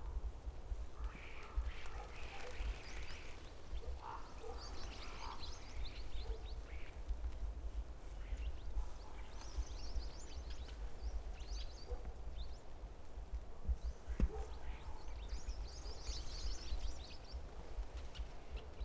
Suchy Las, road surrounding the landfill site - sparrow meeting
came across a bush with hundreds of sparrows seating and chirpping away on it. they moment they noticed me they went silent. only a few squeaks where to hear and gurgle of ravens reverberated in the forest.